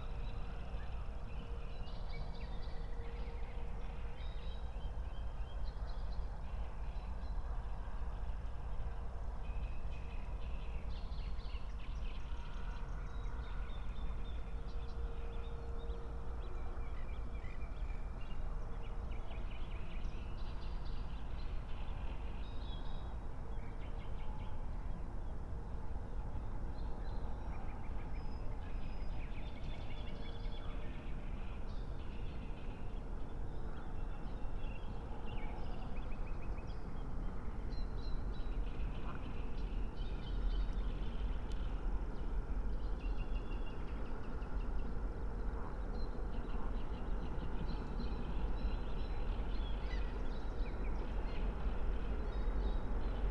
02:00 Berlin, Buch, Moorlinse - pond, wetland ambience